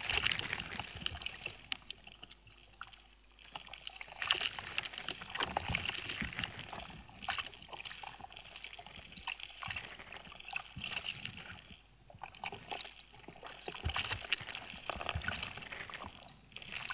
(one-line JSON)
{"title": "Utena, Lithuania, the last ice - the last ice", "date": "2012-03-15 18:15:00", "description": "channel at the dam. temperature about 0 degrees of Celsius, evening. The first part of recording is done with contact microphones placed on the tiny ice on the channel bank. The second part is how it sounds in the air. Roaring dam not so far...", "latitude": "55.52", "longitude": "25.63", "altitude": "115", "timezone": "Europe/Vilnius"}